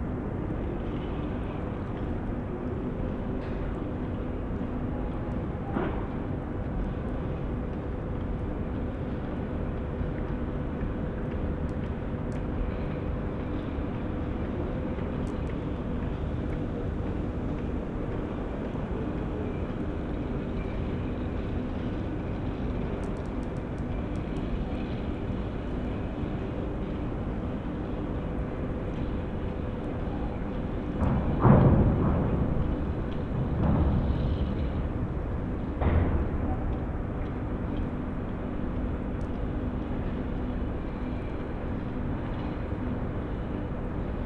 Berlin, Plänterwald, Spree - sunday soundscape
sunday afternoon industrial soundscape, sounds around the heating plant, from accross the river.
(tech note: SD702, audio technica BP4025)
Berlin, Germany, 15 January 2012